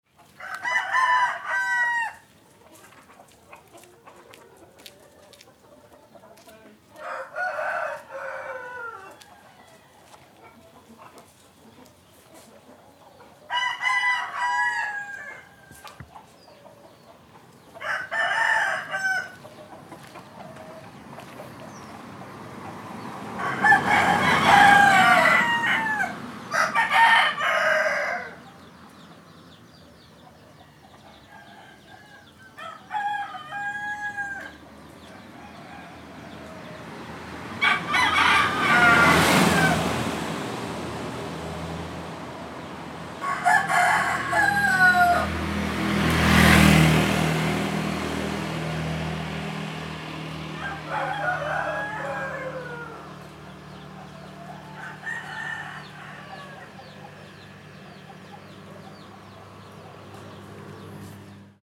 Ubud, Bali, Indonesia - Roosters of Ubud
Roosters are everywhere in Bali - these guys where found by the side of the road, kept in baskets to sell (I presume) - H4n, Stereo Internal Mics, 120 degrees
Kabupaten Gianyar, Bali, Indonesia, 13 April 2014, 10:00